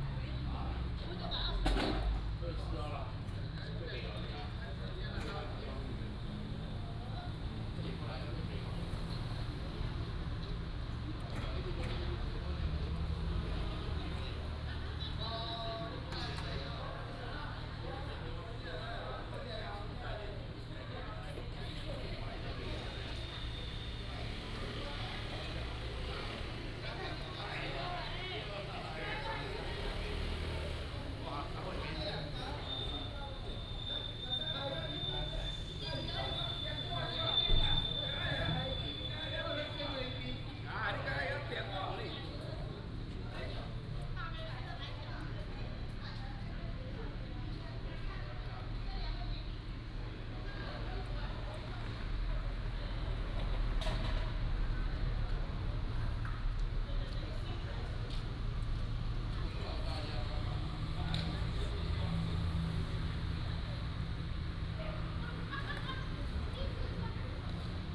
In the Small Square, Square in front of the community, Traffic Sound